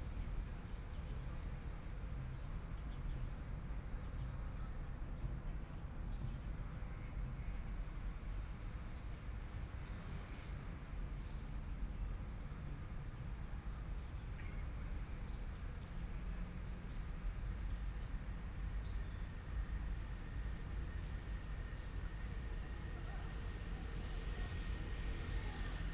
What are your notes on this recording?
Sitting under a tree, Environmental sounds, Traffic Sound, Binaural recording, Zoom H6+ Soundman OKM II